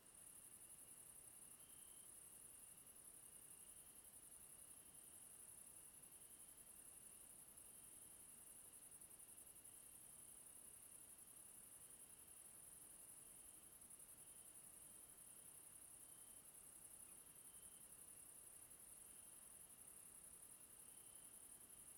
Chazotte, Arlebosc, France - Arlebosc - Ambiance estivale nocturne
Arlebosc - Ardèche
Ambiance estivale nocturne
Auvergne-Rhône-Alpes, France métropolitaine, France